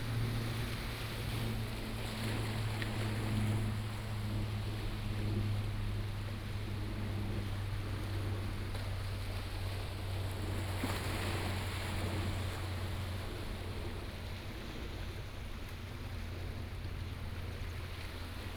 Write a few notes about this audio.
On the coast, The sound of the waves